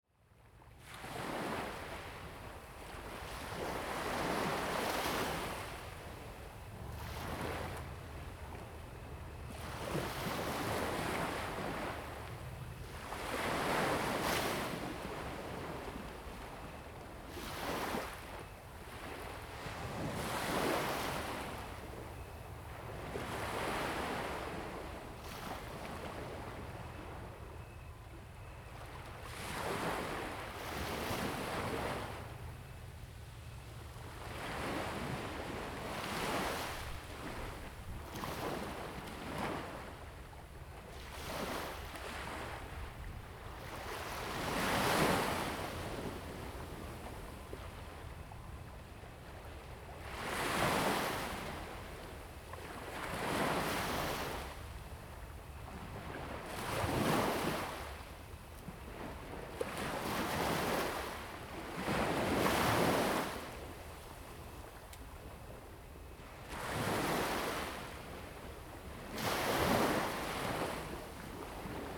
Jinning Township, Kinmen County - the waves
Sound of the waves
Zoom H2n MS+XY